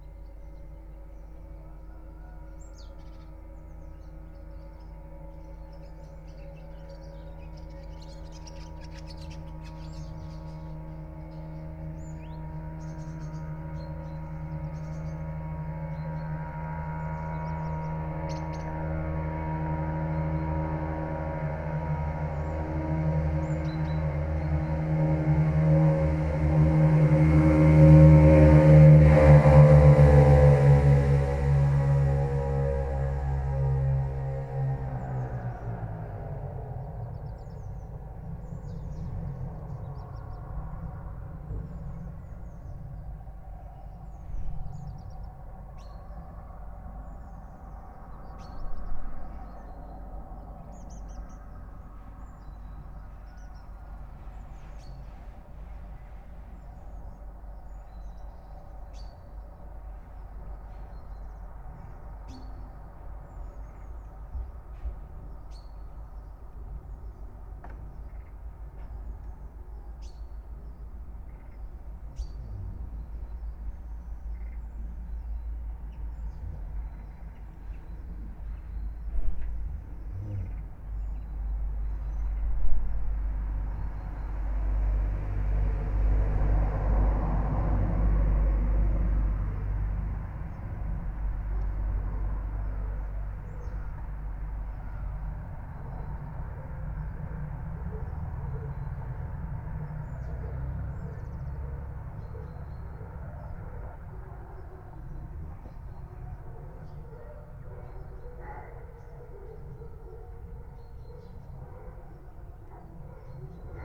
Pod Lipą, Borsuki, Poland - (835c AB) birds and approaching engine
Recording of birds, some approaching engine (not sure was it a car or maybe a plane), and an unknown machine pitch.
Recorded in AB stereo (17cm wide) with Sennheiser MKH8020 on Sound Devices MixPre6-II